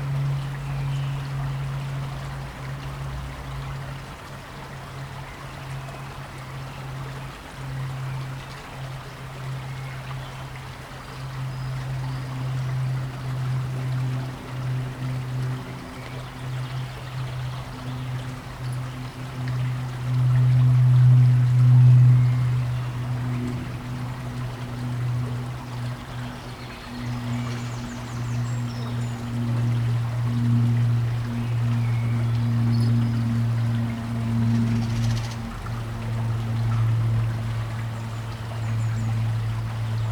{"title": "Playground - Isolated Kids", "date": "2020-04-02 17:36:00", "description": "Recorded in the local playground, at a time when it would be normally full of kids playing after school. The little park where the playground is also a popular cut-through, which makes this little park and play area normally full of people talking, playing and walking.", "latitude": "53.96", "longitude": "-2.01", "altitude": "113", "timezone": "Europe/London"}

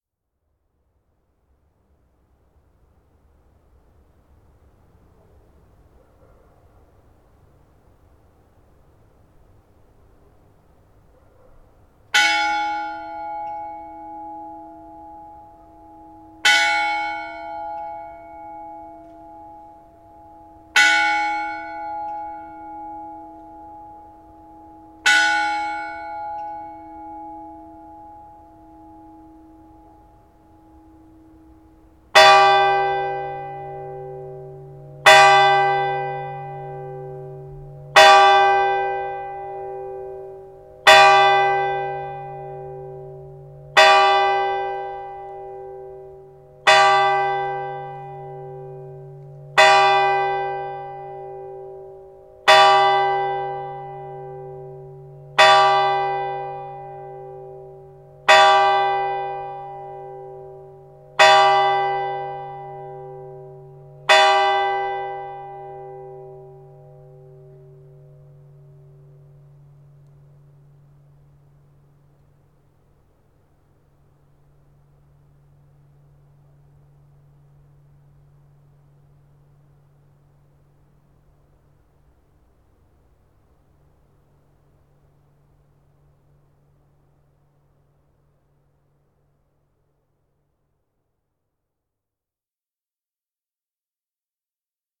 {"title": "Vinuesa, Soria, Spain - Sino de meia noite na aldeia de Vinuesa. - midnighjt bells in the village of Vinuesa.", "date": "2012-02-16", "description": "Sino de meia noite na aldeia de Vinuesa em Soria, Espanha. Mapa Sonoro do rio Douro. Midnight church bells in the village of Vinuesa, Soria, Spain. Douro river Sound Map", "latitude": "41.91", "longitude": "-2.76", "altitude": "1091", "timezone": "Europe/Madrid"}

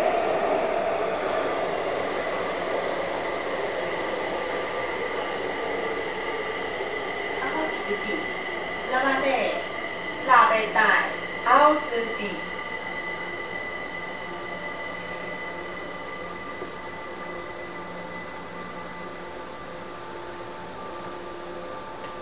{"title": "KRTC (Subway) Arena - Main Station", "description": "2009, Oct, 20th. On the Path from Arena to Main staion, Red Line", "latitude": "22.64", "longitude": "120.30", "altitude": "4", "timezone": "Europe/Berlin"}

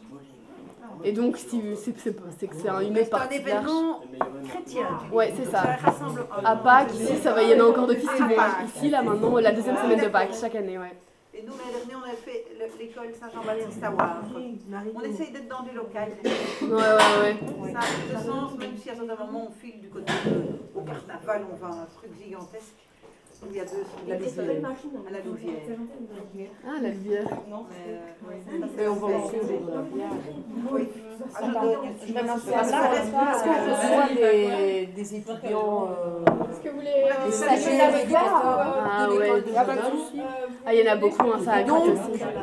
{"title": "L'Hocaille, Ottignies-Louvain-la-Neuve, Belgique - KAP Le Levant", "date": "2016-03-24 14:50:00", "description": "A KAP, in the Louvain-La-Neuve term is a \"Kot-A-Projet\". A kot is a house intended for students and projet means there's a project. In fact, KAP means students leaving there have a special projects, and there's hundred. We are here in the KAP Le Levant. Their project is to make and distribute bread. This bread is especially made by persons living with a mental handicap. This is a very-very-very friendly place.\nOn this day of activity, some students will learn to make bread. This recording is the short moment before workshop begins.", "latitude": "50.67", "longitude": "4.61", "altitude": "125", "timezone": "Europe/Brussels"}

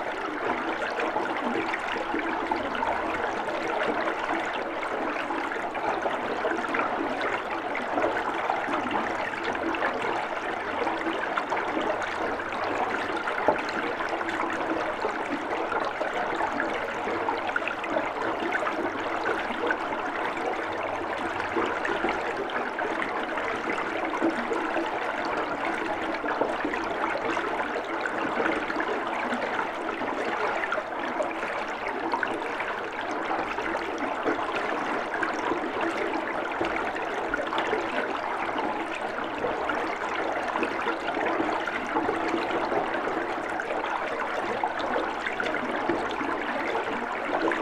{"title": "Differdange, Luxembourg - Water flowing", "date": "2017-04-16 11:00:00", "description": "Water flowing in a tube, in an old entrance of the mine. This water is pushed up by a pump and fill an enormous tank. This water is intended to cool down metal in the Differdange steelworks.", "latitude": "49.52", "longitude": "5.88", "altitude": "387", "timezone": "Europe/Luxembourg"}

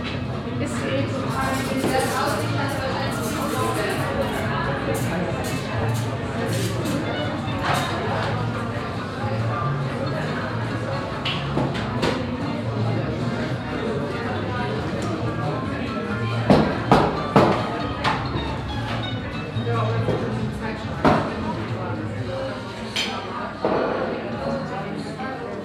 {"title": "Rüttenscheid, Essen, Deutschland - essen, rüttenscheider str, cafe bar", "date": "2014-05-14 15:30:00", "description": "In einem lokalen Szene Cafe- Bar. Die Klänge der Cafemaschine, Stimmengewirr, Bestecke und Geschirr untermalt von französischer Chanson Musik.\nInside a popular local cafe-bar. The sounds of the coffee machine, dishes, voices underlayed by french chanson music.\nProjekt - Stadtklang//: Hörorte - topographic field recordings and social ambiences", "latitude": "51.44", "longitude": "7.01", "altitude": "117", "timezone": "Europe/Berlin"}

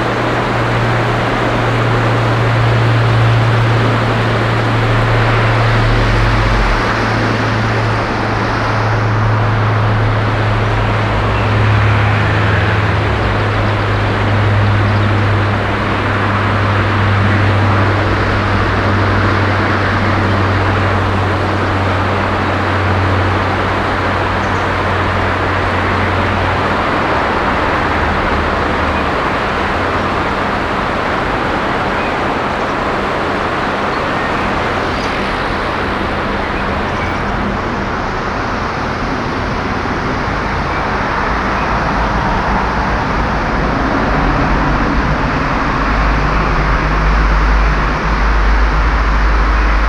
{"title": "erkrath, neandertal, talstrasse, durchgangsverkehr", "description": "strassenverkehr der tal durchfahrtsstrasse, morgens\nsoundmap nrw: social ambiences/ listen to the people - in & outdoor nearfield recordings, listen to the people", "latitude": "51.23", "longitude": "6.95", "altitude": "89", "timezone": "GMT+1"}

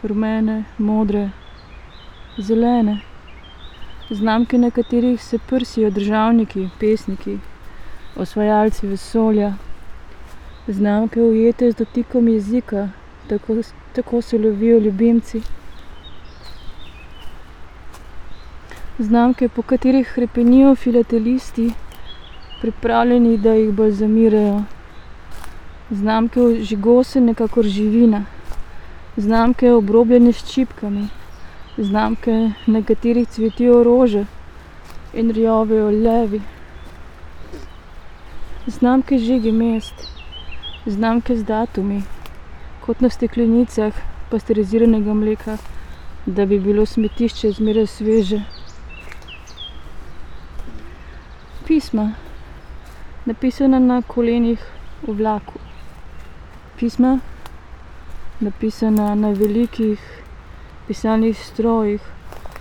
{"title": "little island, river drava, melje - walking, reading poem", "date": "2014-04-06 14:20:00", "description": "fragment from a reading session, poem Smetišče (Dubrište) by Danilo Kiš\nthis small area of land is sometimes an island, sometimes not, depends on the waters; here are all kind of textile and plastic pieces, hanging on branches, mostly of poplar trees and old willows, so it is a nice place to walk and read a poem from Danilo Kiš, ”Rubbish Dump\"", "latitude": "46.56", "longitude": "15.68", "altitude": "247", "timezone": "Europe/Ljubljana"}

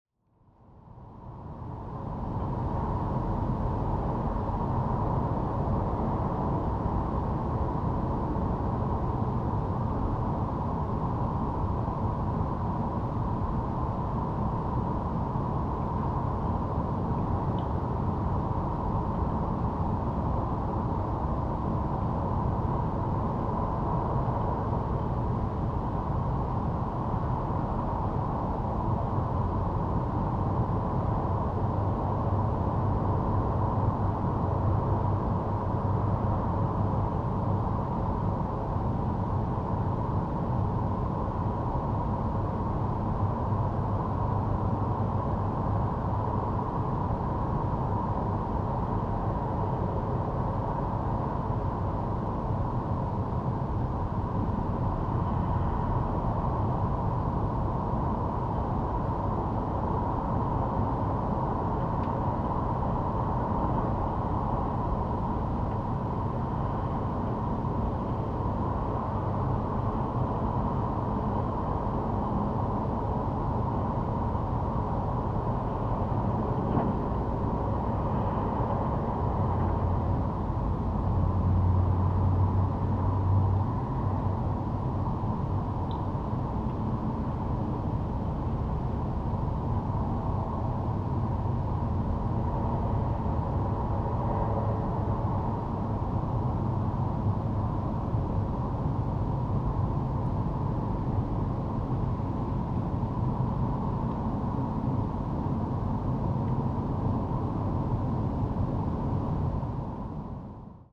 {"title": "City noise from inside, Riga, Latvia - city noise from inside", "date": "2012-03-06 10:20:00", "description": "city noise of Riga filtered through a window", "latitude": "56.95", "longitude": "24.07", "altitude": "4", "timezone": "Europe/Riga"}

